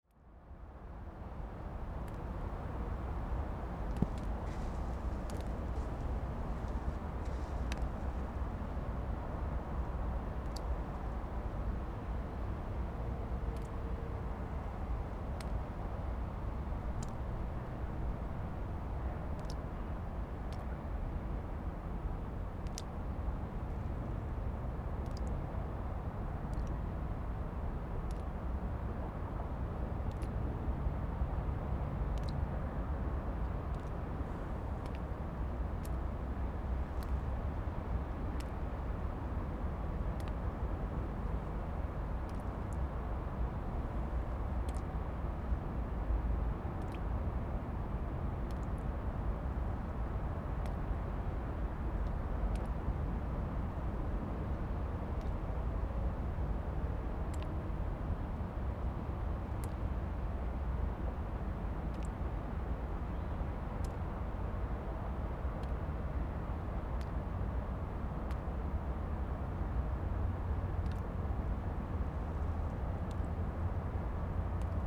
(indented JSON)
{
  "title": "Lithuania, Vilnius, at the Gediminas castle",
  "date": "2012-11-06 14:30:00",
  "description": "cityscape and autumnal rain drops",
  "latitude": "54.69",
  "longitude": "25.29",
  "altitude": "123",
  "timezone": "Europe/Vilnius"
}